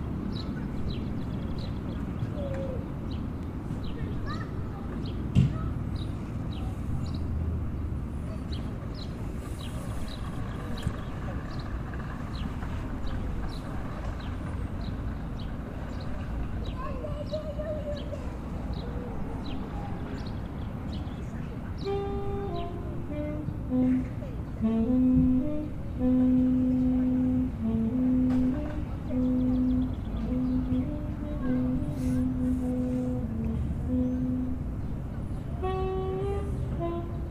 Leikkikenttä Brahe, Porvoonkatu, Helsinki, Suomi - playground saxophone
Saxophone player at the children´s playground.